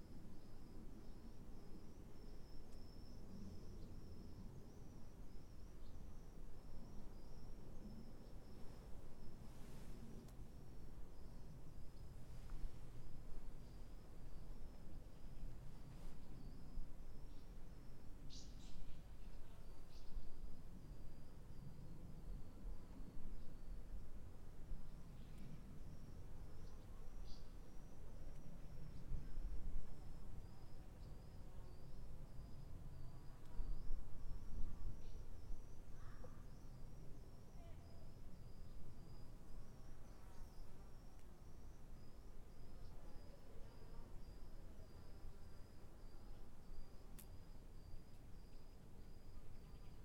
Buzludzha, Bulgaria, Backside - Echos at the Backside of Buzludzha
On the windy top of the mountain, where the socialist party of Bulgaria let built Buzludzha, there is a quiet place on the back of the building, where the echoes of the voices of the visitors, that are passing by, are caught.